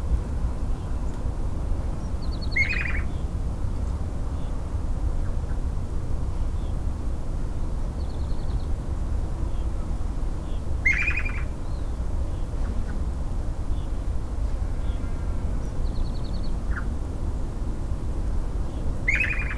Prta del Bosque, Bosques del Refugio, León, Gto., Mexico - En el camino del Cerro Gordo.
On the way to Cerro Gordo.
Some birds, very distant traffic, some flies or mosquitoes, some other animals, a closer vehicle, and the footsteps of someone who passed by on the path made of stones are heard.
I made this recording on september 13th, 2022, at 10:23 a.m.
I used a Tascam DR-05X with its built-in microphones and a Tascam WS-11 windshield.
Original Recording:
Type: Stereo
Se escuchan algunos pájaros, tráfico muy lejano, algunas moscas o zancudos, algunos otros animales, algún vehículo más cercano y los pasos de alguien que pasó cerca por el camino empedrado.
Esta grabación la hice el 13 de septiembre 2022 a las 10:23 horas.
Guanajuato, México, 13 September 2022, 10:23